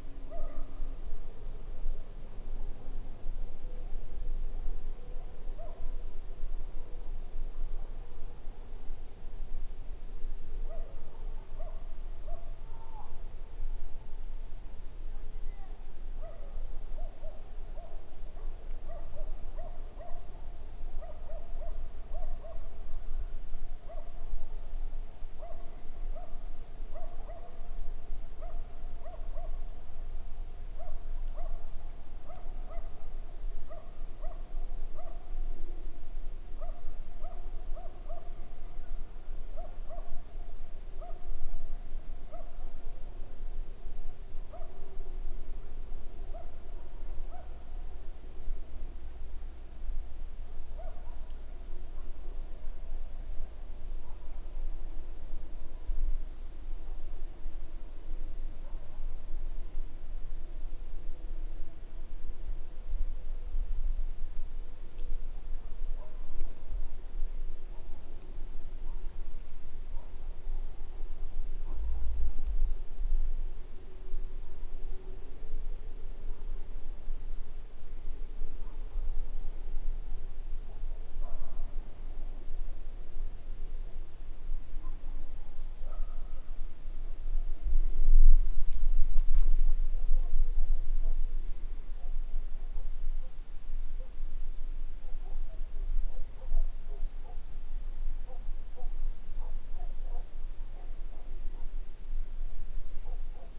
Siła, Pole - Full moon quiet
Last days of summer. Full moon in the middle of nowhere.